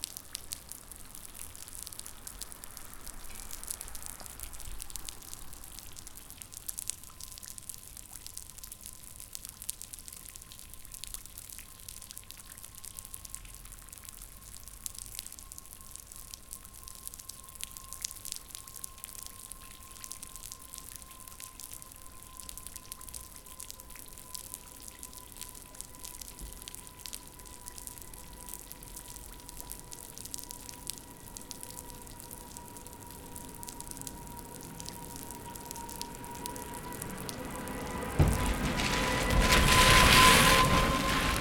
{"title": "The milkmans drive [Bristol, UK @ 02:35, 02-06-2009]", "latitude": "51.44", "longitude": "-2.61", "altitude": "20", "timezone": "Europe/Berlin"}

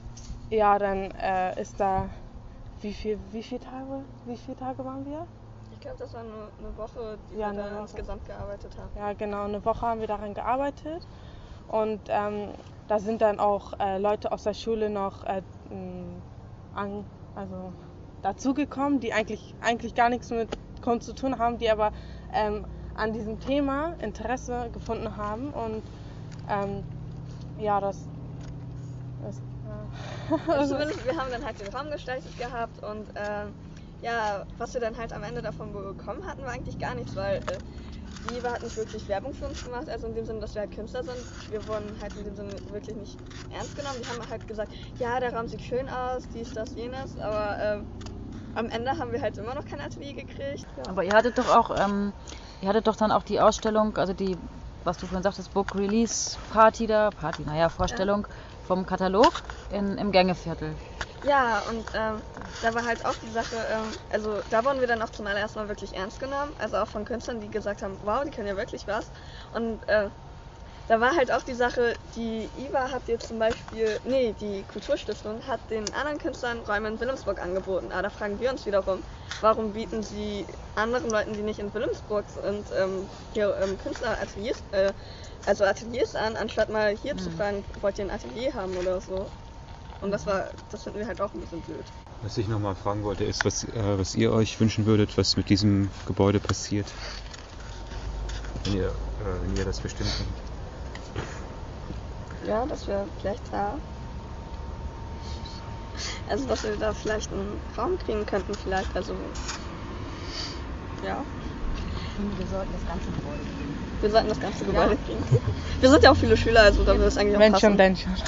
{"description": "schülerInnen erzählen von mangelndem respekt ihrer künstlerischern arbeit gegenüber und fordern atelierräume für das gängeviertel in der innenstadt und für sich in wilhelmsburg..", "latitude": "53.51", "longitude": "9.99", "altitude": "3", "timezone": "Europe/Berlin"}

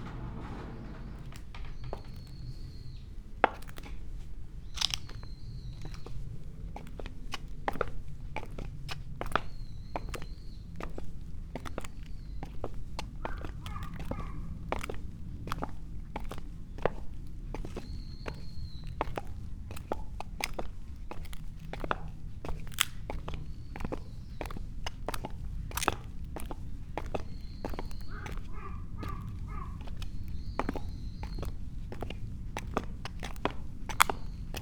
{"title": "Omotesenke Fushin-an, Kyoto - stone path, wooden clogs, walking", "date": "2014-10-31 12:27:00", "latitude": "35.03", "longitude": "135.75", "altitude": "64", "timezone": "Asia/Tokyo"}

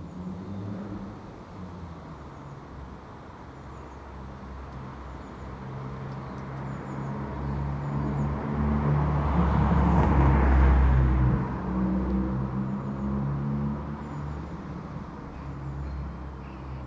{"title": "Contención Island Day 26 outer west - Walking to the sounds of Contención Island Day 26 Saturday January 30th", "date": "2021-01-30 10:46:00", "description": "The Drive Westfield Drive Elmfield Road Richmond Mews\nThe small estate\nno pavements\nneat modernity\nCars pulse along the road behind me\nA sparrowhawk\nmobbed by a Herring Gull\ncircles\nspins away\nto drop into an old tree", "latitude": "55.00", "longitude": "-1.63", "altitude": "76", "timezone": "Europe/London"}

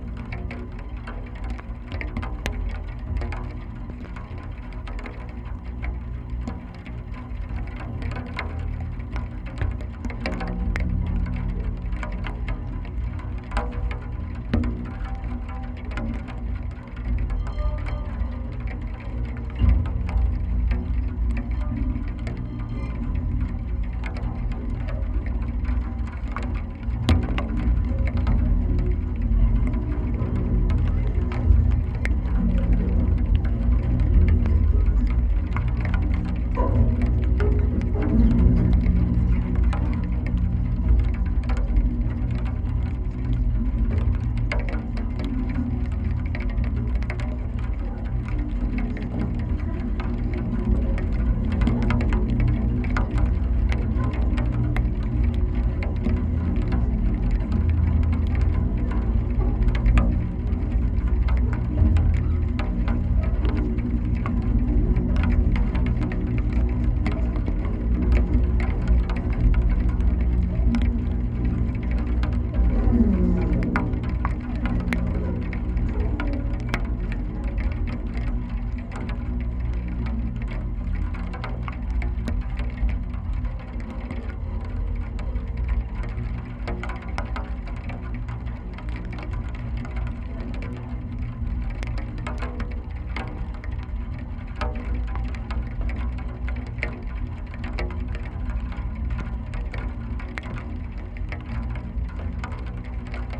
{"title": "Parallel sonic worlds: Millennium Bridge deep drone, Thames Embankment, London, UK - Millenium Bridge wires resonating in rain", "date": "2022-05-20 13:00:00", "description": "Drops often hit on, or very close to, the mics. People are still walking past but the wet dampens their footsteps. At one point a large group of school kids come by, some squeaking their trainers on the wet metal surface. There is a suspicion of some of their voices too.", "latitude": "51.51", "longitude": "-0.10", "altitude": "3", "timezone": "Europe/London"}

